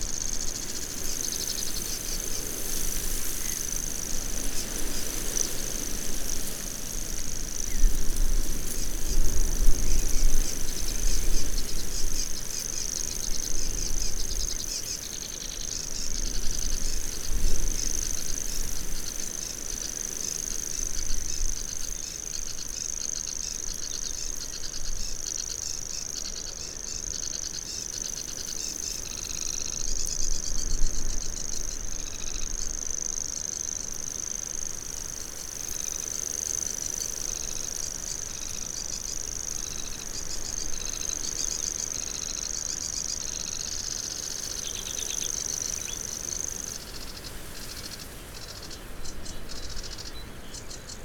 Argyll and Bute, UK - Reedbed songsters ...
Reedbed songsters ... Dervaig ... Isle of Mull ... bird song from grasshopper warbler ... sedge warbler ... calls from grey heron ... common gull ... edge of reed bed ... lavaliers in parabolic ... much buffeting ...
18 May 2011, 05:30